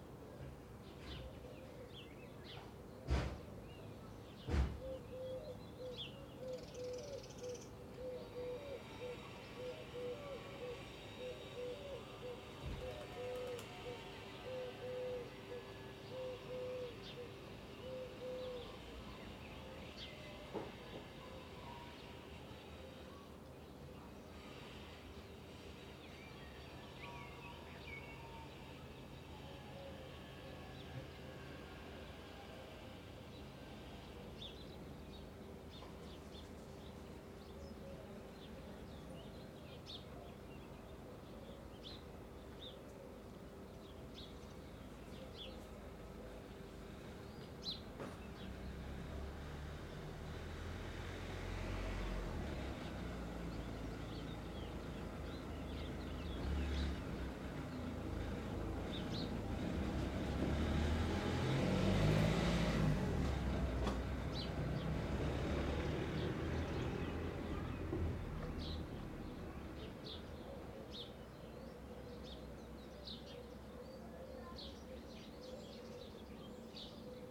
Chemin des Sablons, La Rochelle, France - long 30 neighborhood sound sequence
long neighborhood sound sequence at 10 a.m.
Calm of covid19
ORTF DPA4022 + Rycotte + Mix 2000 AETA = Edirol R4Pro
Nouvelle-Aquitaine, France métropolitaine, France, April 2020